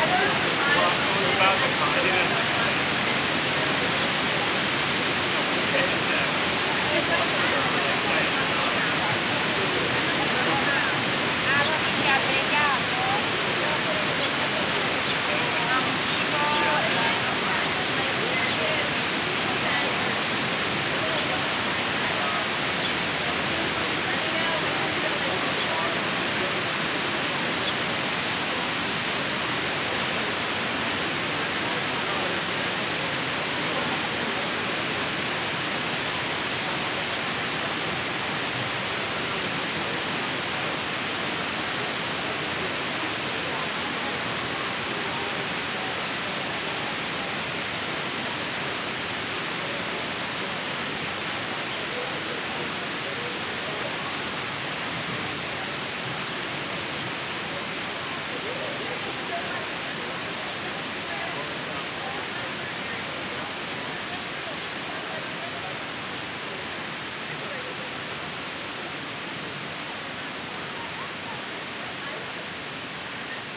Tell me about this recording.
Sounds from the artificial waterfall on Paley Park, a small pocket park designed by Robert Zion (1967).